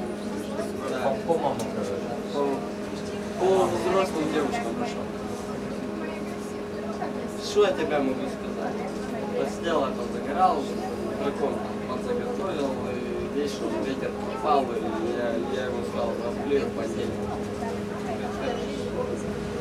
Taking a trolleybus from Strada Alba Iulia to Bulevardul Ștefan cel Mare in the center of Chișinău.